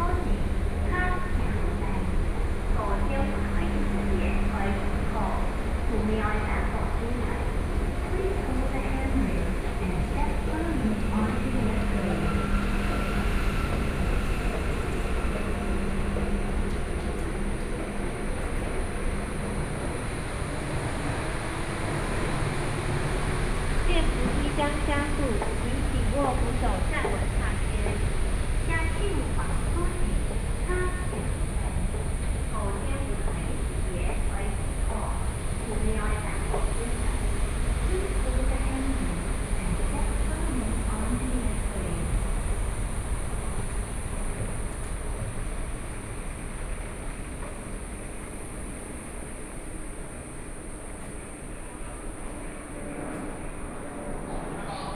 Wende Station, Taipei City - Walking into the MRT station
Walking into the MRT station, Aircraft flying through, traffic sound
Taipei City, Taiwan, 4 May 2014